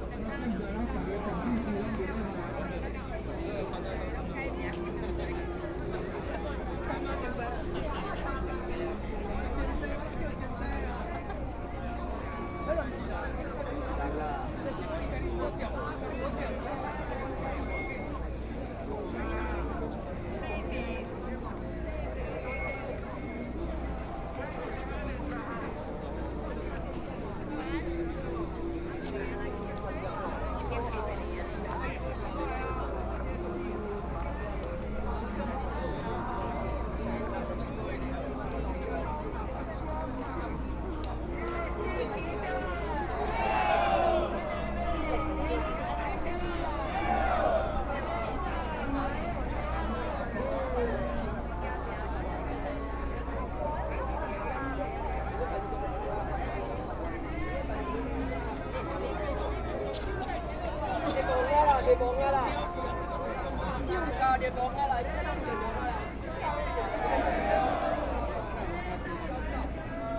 East Gate of Taipei City - Anger
Protesters, Shouting slogans, Binaural recordings, Sony Pcm d50+ Soundman OKM II